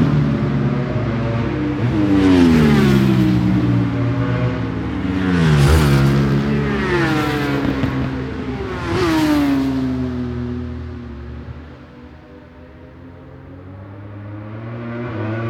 Brands Hatch GP Circuit, West Kingsdown, Longfield, UK - WSB 2004 ... superbike qualifying ...
world superbikes 2004 ... superbike qualifying ... one point stereo mic to mini disk ...